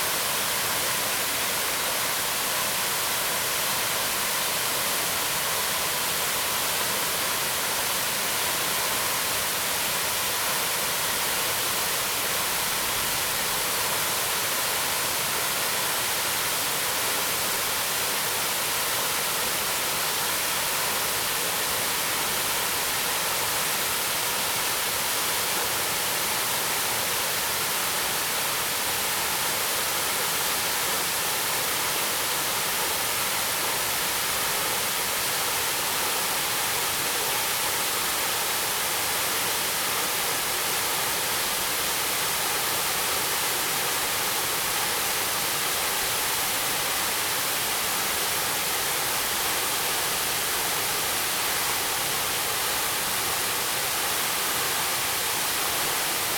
觀音瀑布, 埔里鎮 Nantou County - waterfall
waterfalls
Zoom H2n MS+ XY
13 December 2016, ~14:00